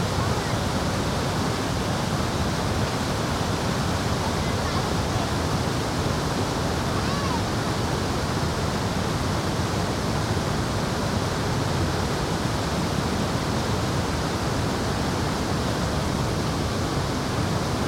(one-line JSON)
{"title": "Cascade de Coo, Stavelot, Belgique - Between cascades", "date": "2022-04-18 14:00:00", "description": "Tech note : Sony PCM-M10 internal microphones.", "latitude": "50.39", "longitude": "5.88", "altitude": "234", "timezone": "Europe/Brussels"}